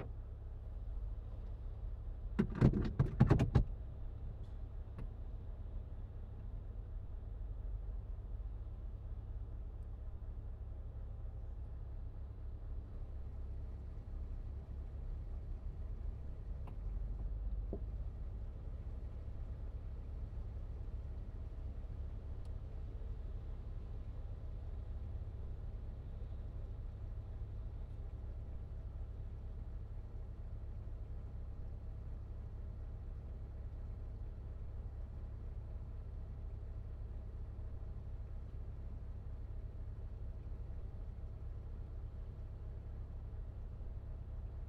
Movable recording from inside my old Volvo on snowy bumpy roads in the mountains down to a less bumpy coastland rainy urban place, and the car (which isn´t in very perfect state) made some wonderful composition by itself - so i had to record this. The actual road is the mountain road between Sollefteå and Örnsköldsvik. When the recording is starting we´re somewhere around Gålsjö and when it is stopping we´re in Örnsköldsvik city by the seacoast. The climate changes as the vehicle moves more down to the sealevel. More high up there is a lot of snow (and problems with the state of the road aswell).
Some notes written on the 3rd jan 2012:
the actual car journey was made in december, around the 8th 9th or
something thereabout - and captures a sound i have been hearing for
years in my car - - that only comes through under some special
conditions - it´s like the weather humidity is affecting the
squeeking... well you will hear - it´s not very special except this
10 December 2011, 13:30